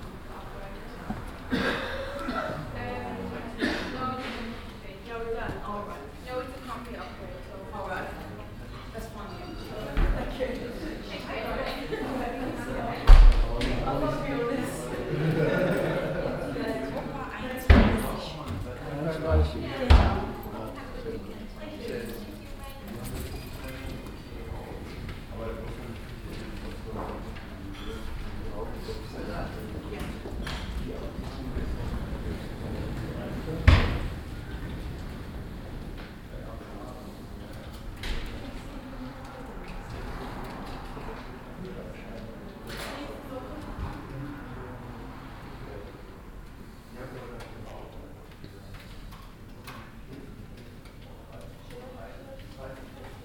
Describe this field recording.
hotel foyer morgens in der auscheckzeit, internationales publikum, schritte, rollkoffer, mobiltelephone, computerpiepsen, soundmap international, social ambiences/ listen to the people - in & outdoor nearfield recordings